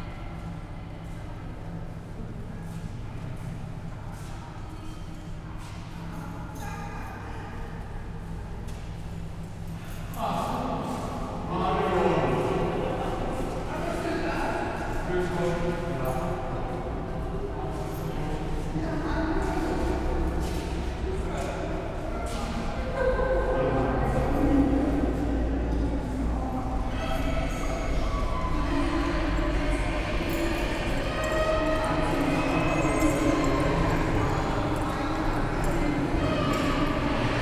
Berlin, Wannsee, S-bahn - station hall ambience
Berlin, S-Bahn station Wannsee, Saturday afternoon, station hall echos and ambience
(Sony PCM D50, DPA4060)
Berlin, Germany, 6 December 2014, 13:30